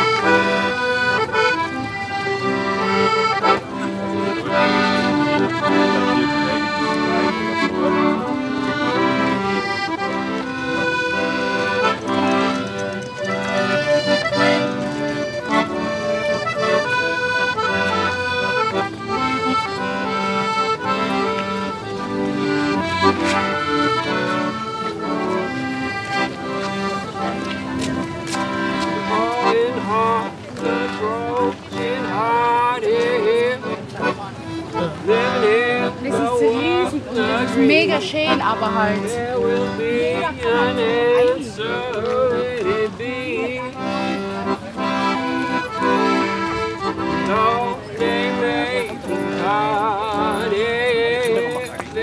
Maybachufer, Berlin, Deutschland - Accordeon - busker
A busker, Cory Blakslee, plays the accordeon close to the entrance to the Neukölln flea market.
Sunny Sunday, summer has just started, after a humid midsummer night.
People passing by chatting, between the musician and the microphone.
Recorded on a Sony PCM100